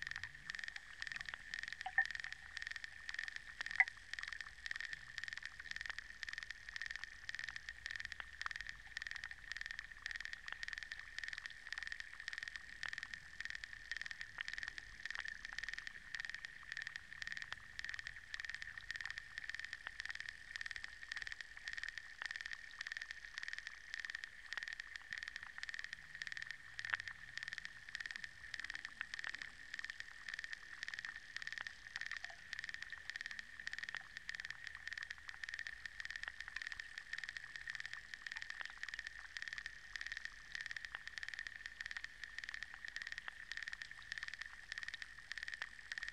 underwater activity in a pond just right after rain
Atkočiškės, Lithuania